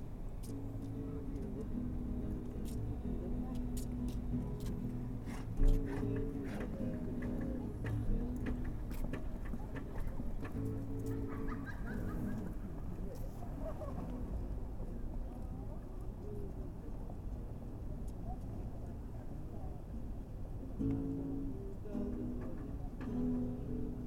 ул. Орджоникидзе, Якутск, Респ. Саха (Якутия), Россия - Evening in Yakutsk
Young people are sitting on benches in a park in Yakutsk, the capital of the Sakha republic. The benches are around the Taloye lake, where there are lot of mosquitoes in the evening. The evening is hot and full of smoke. The young people are laughing, playing the guitar and talking. Talks by other visitors of the park can also be heard.
These people may sound so careless if you take into account the current war situation. However, many young people over there seem to be anti-militaritstic, having to somehow live far away from Moscow and still be engaged in the political proceses.